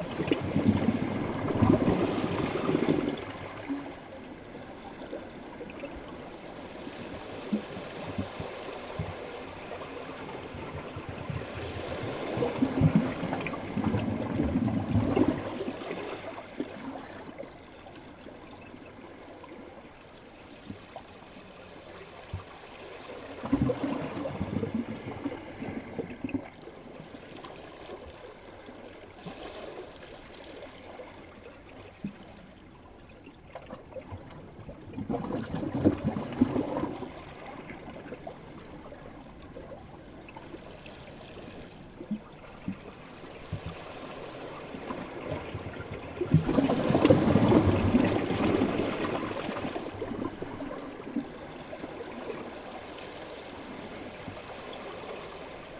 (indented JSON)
{
  "title": "Port Charles, Waikato, New Zealand - Port Charles Ocean Rocks, New Zealand",
  "date": "2021-01-13 12:34:00",
  "description": "Ocean waves going through rocks close to the coast of Stony Bay. You can also hear the cicadas from the mountain close by.\nRecorded with ZoomH4 in stereo.",
  "latitude": "-36.51",
  "longitude": "175.43",
  "timezone": "Pacific/Auckland"
}